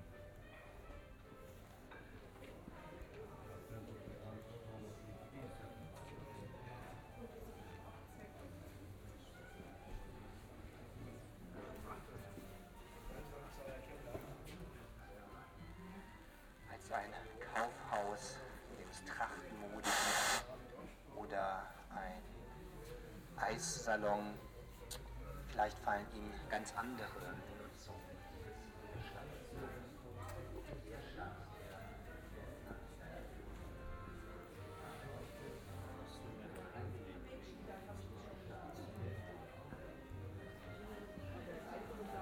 Der Leerstand spricht Bad Orb - Der Leerstand spricht walk
'Der Leerstand spricht' was a radio live performance / installation in Bad Orb. In front of empty houses of the Hauptstrasse radios were distributing the live voice, speaking texts but also inviting pedastrians to contribute their utopia of the city and the empty spaces: every empty building is a promise. Biaural recording of a walk down the street until a band is playing.